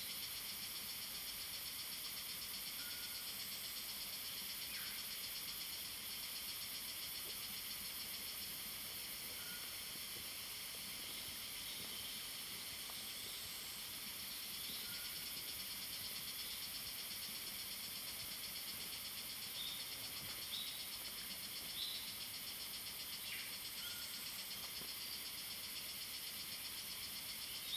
華龍巷, 魚池鄉五城村 - Cicadas cry and Bird sounds
In the woods, Cicadas cry, Bird sounds
Zoom H2n MS+XY